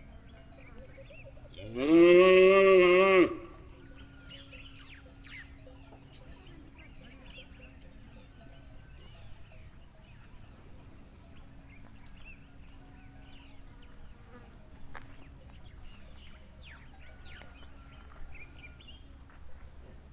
Sikalenge, Binga, Zimbabwe - sounds of the bush...
… I wanted to capture the peaceful sounds of the bush in Sikalenge before our meeting with the Women’s Forum started… but it turned out not all that peaceful…
14 June 2016